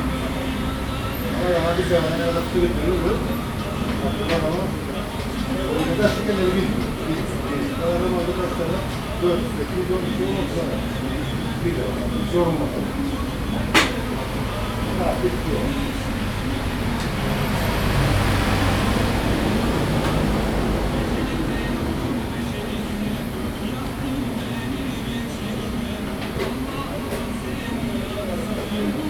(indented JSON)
{
  "title": "Old Bazar in Girne - from inside",
  "date": "2017-08-01 11:17:00",
  "description": "Interieur of the Old Bazar, almost empty",
  "latitude": "35.34",
  "longitude": "33.32",
  "altitude": "13",
  "timezone": "Asia/Nicosia"
}